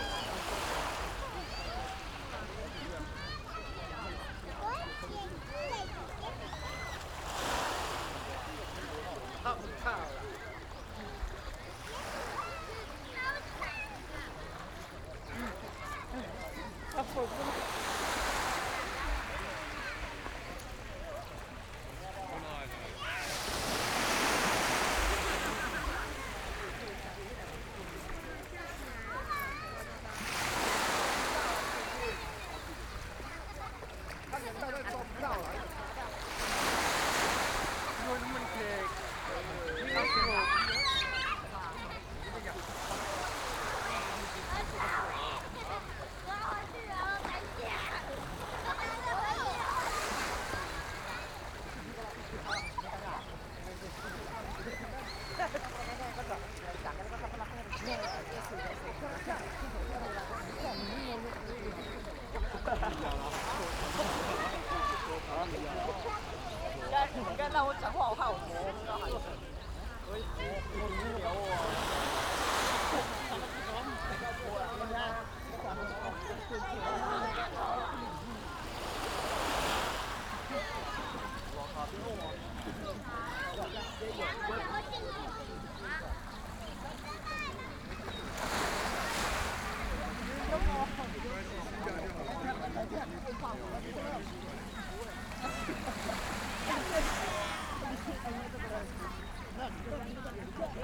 Sound of the waves, At the beach, Tourist
Zoom H6 MS+ Rode NT4
Suao Township, Yilan County, Taiwan